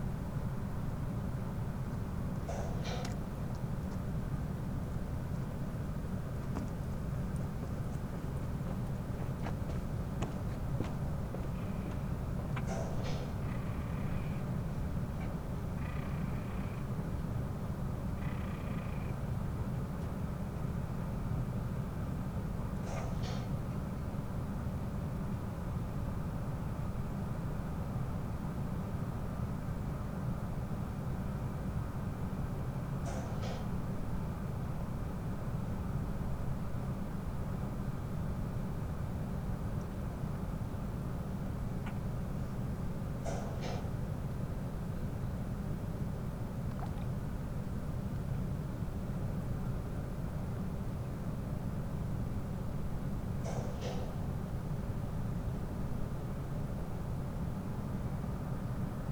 lemmer, vuurtorenweg: marina - the city, the country & me: marina berth
mechanical (hydraulic?) noise of a concrete factory (diagonally opposite) with echo
the city, the country & me: june 20, 2011
Lemmer, The Netherlands, 20 June